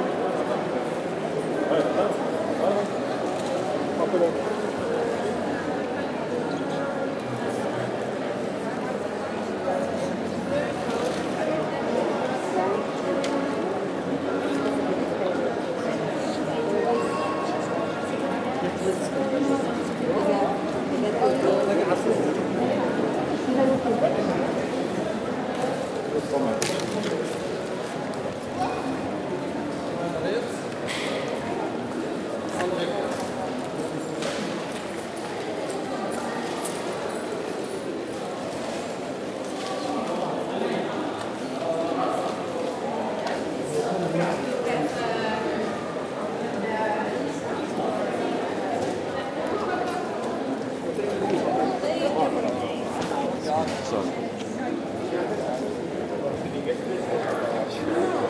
Into the commercial street called Meir, on a colorful saturday afternoon, people walking quietly. A piano player, called Toby Jacobs. He's speaking to people while playing !